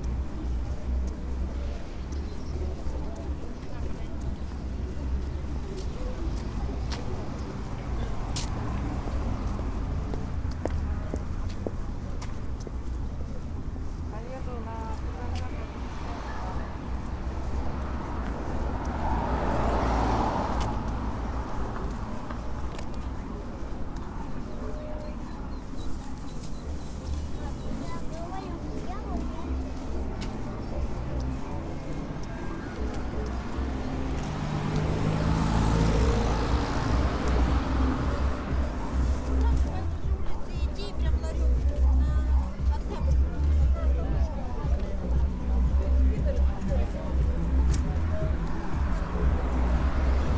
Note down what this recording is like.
Walkinth through rows of merchants near "Jubileum" market in Barnaul. Voices in Russian, commercials, cars, ambient noise.